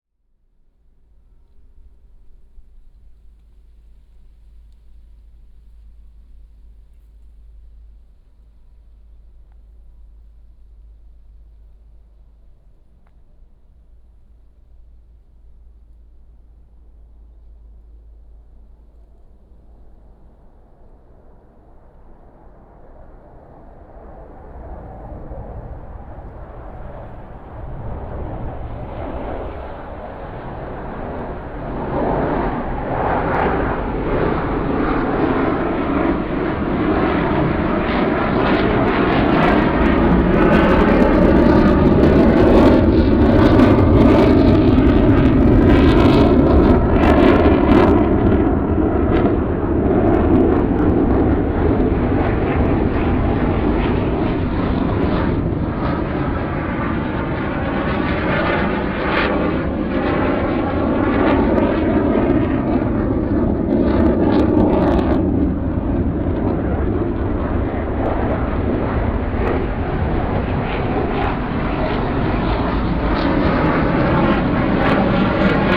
海埔路181巷, Xiangshan Dist., Hsinchu City - Fighter flight
Fighters sound, Birds sound, Binaural recordings, Sony PCM D100+ Soundman OKM II